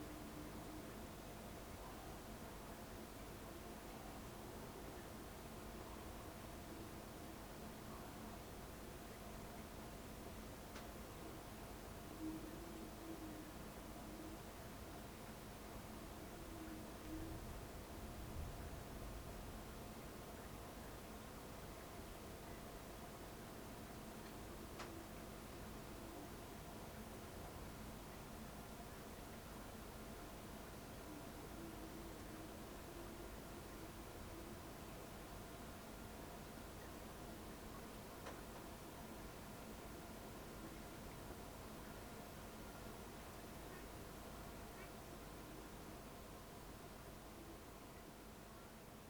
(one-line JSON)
{"title": "workum, het zool: marina, berth h - the city, the country & me: marina, aboard a sailing yacht", "date": "2011-06-29 00:25:00", "description": "rain water dripping off\nthe city, the country & me: june 29, 2011", "latitude": "52.97", "longitude": "5.42", "altitude": "1", "timezone": "Europe/Amsterdam"}